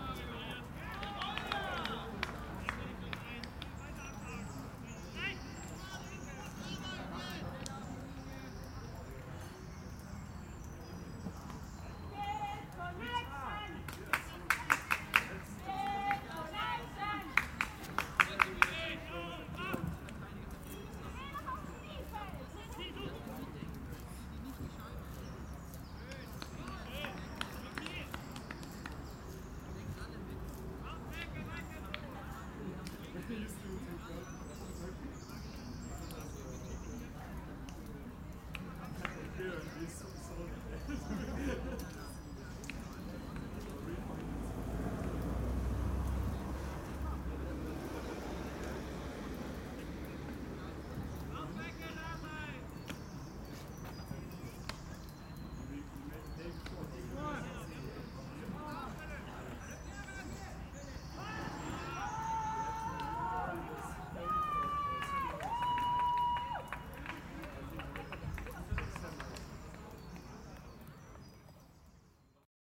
koeln, frisbee match
i did not know that there is such a thing as a frisbee match (like a soccer match).
recorded june 20th, 2008.
project: "hasenbrot - a private sound diary"
Cologne, Germany